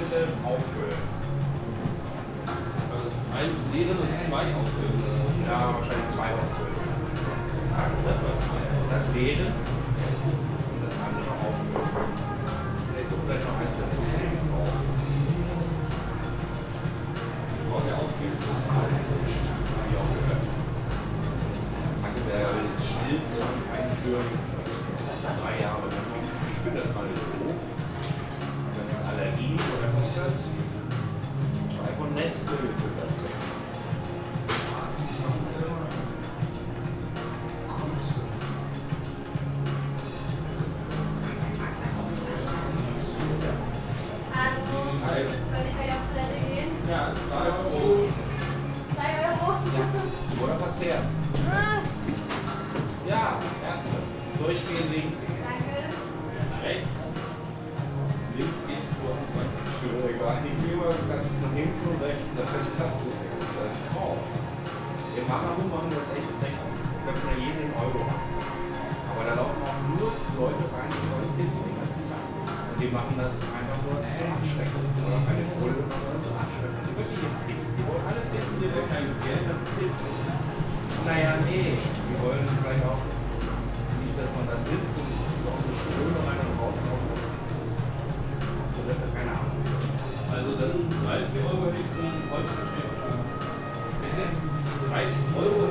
berlin, ohlauer straße: - the city, the country & me: barman, guests
barman and guests talking over over passers-by who want to use the toilet of the pub
the city, the country & me: may 1, 2012
Berlin, Germany, May 2012